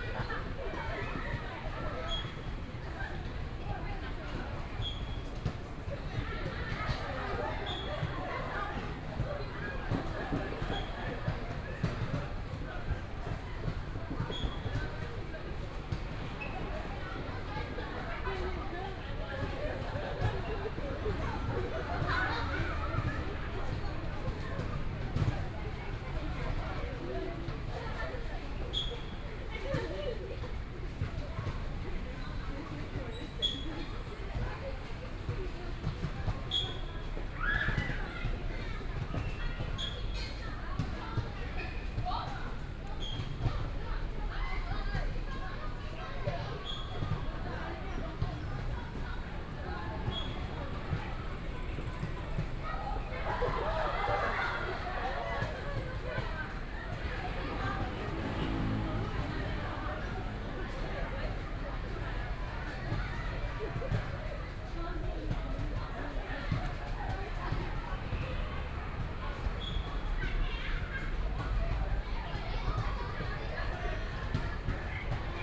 Play sound, Zoom H4n+ Soundman OKM II